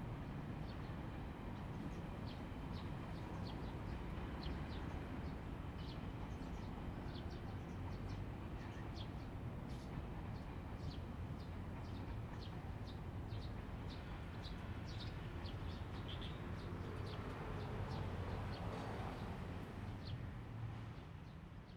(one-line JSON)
{"title": "中琉紀念公園, Hualien City - in the Park", "date": "2014-08-29 10:23:00", "description": "in the Park, Traffic Sound, Birds, Sound from the railway station\nZoom H2n MS+XY", "latitude": "24.00", "longitude": "121.60", "altitude": "19", "timezone": "Asia/Taipei"}